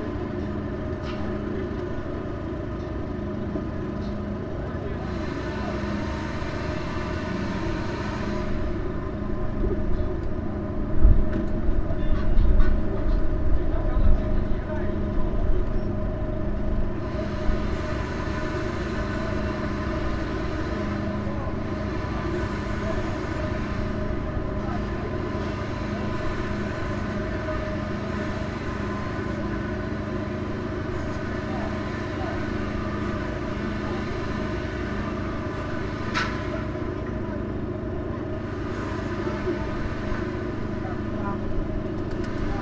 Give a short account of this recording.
Sound captured from the bottom of the dry fountain near cathedral. Some construction noises in the background...